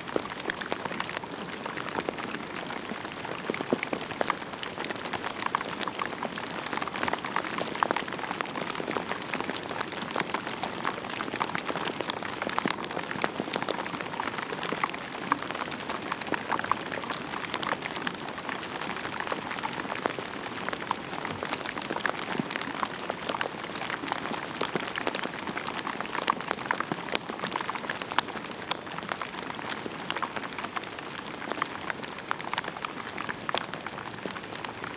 Wallstreet, CO, USA - Rain Falling on Collapsed Widowmaker...
Rain falling on burnt/collapsed pine six years after the Fourmile Canyon Forest Fire of September 2016
Recorded with a pair of DPA4060s and a Marantz PMD661.